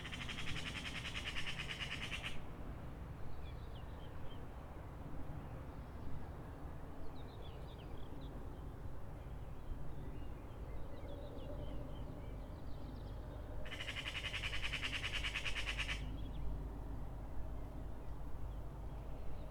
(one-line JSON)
{"title": "Poznan, Piatkowo district, Magpie", "date": "2010-05-29 05:00:00", "description": "a frantic magpie jumping around in sbs garden and greenhouse. recorded early around 5 in the morning", "latitude": "52.46", "longitude": "16.93", "timezone": "Europe/Berlin"}